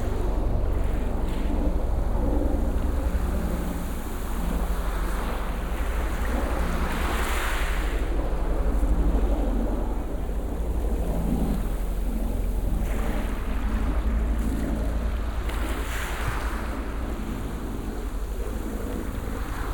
Binaural recording of a helicopter low above the beach.
recorded with Soundman OKM + ZoomH2n
sound posted by Katarzyna Trzeciak
Comunitat Valenciana, España